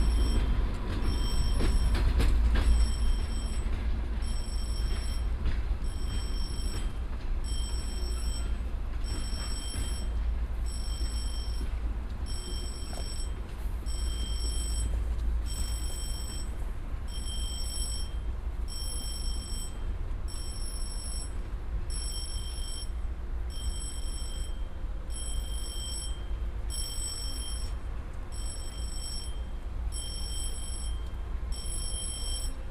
Näituse st. railway crossing, Tartu, Estonia